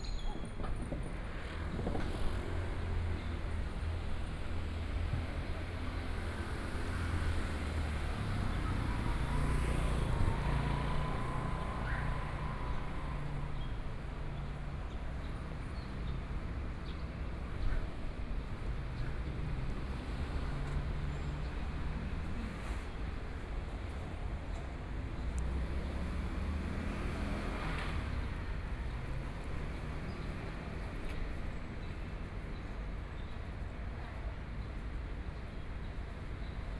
JiangNing Park, Taipei City - Morning in the park
Morning in the park, Traffic Sound, Environmental sounds, Birdsong
Binaural recordings
Taipei City, Taiwan